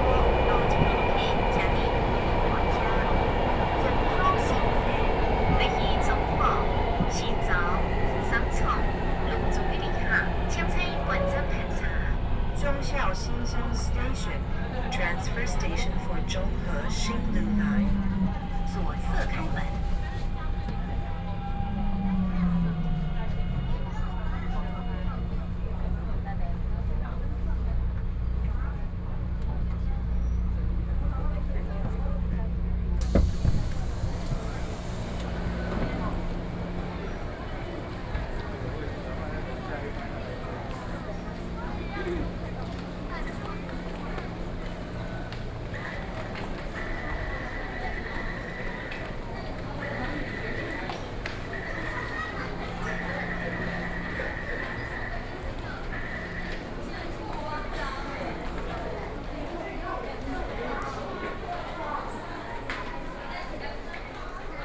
Da'an District, Taipei - soundwalk
MRT stations, from Zhongxiao XinshengSony to Guting, PCM D50 + Soundman OKM II
台北市 (Taipei City), 中華民國, 22 June